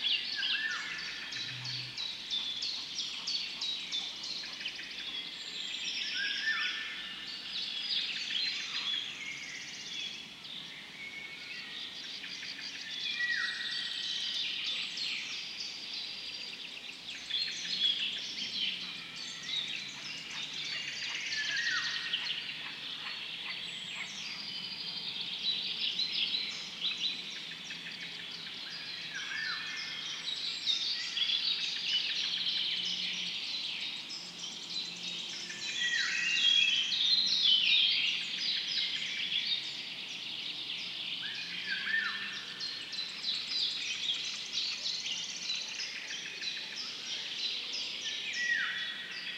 Sudeikiai, Lithuania, at Alausas lake
birds and everything at the lake